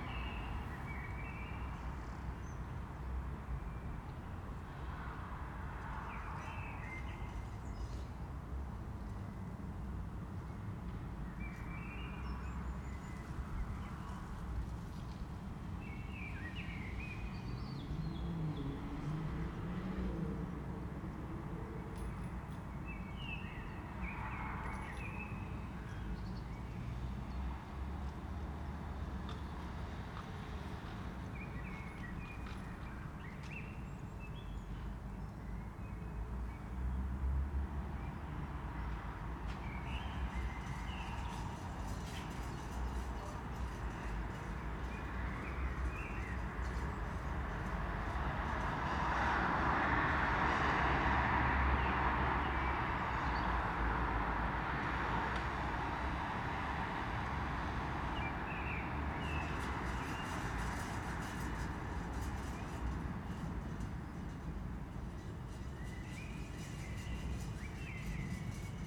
sound of the city at a balcony on the 4th floor, recorded with a pair of UsiPro and SD702